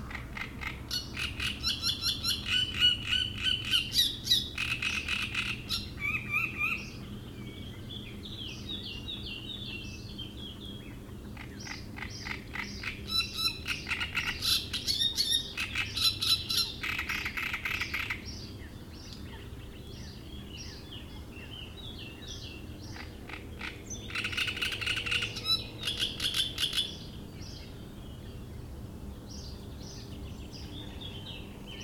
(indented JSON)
{
  "title": "Roselière plage Chatillon, Chindrieux, France - Rousserole turdoïde.",
  "date": "2004-05-15 09:30:00",
  "description": "Le chant criard de la rousserolle turdoïde un migrateur qui vient d'Afrique. Elle peut chanter jour et nuit.",
  "latitude": "45.80",
  "longitude": "5.85",
  "altitude": "235",
  "timezone": "Europe/Paris"
}